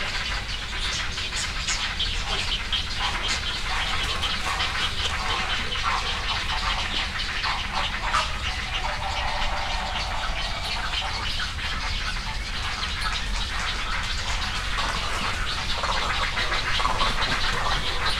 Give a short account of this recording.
Great egrets, cattle egrets, and other birds in the woods beside Hyozu Shrine in Yasu City, Japan. Recorded with a Sony PCM-M10 recorder and FEL Communications Clippy Stereo EM172 Microphone tied to a tree.